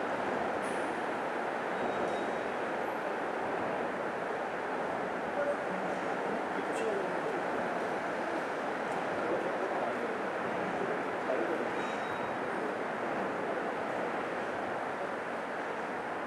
대한민국 서울특별시 서초구 동작역 1번출구 - Dongjak Station, Gate No.1
Dongjak Station, Gate No.1, Subway bridge alongside a stream
동작역 1번출구, 동작대교